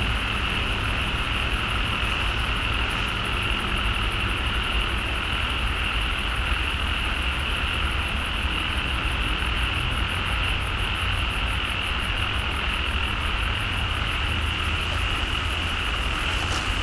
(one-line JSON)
{"title": "The Bamboo Curtain Studio, New Taipei City, Taiwan - Frog chirping", "date": "2012-04-19 20:07:00", "description": "Frog chirping, Traffic Sound\nBinaural recordings\nSony PCM D50 + Soundman OKM II", "latitude": "25.14", "longitude": "121.46", "altitude": "6", "timezone": "Asia/Taipei"}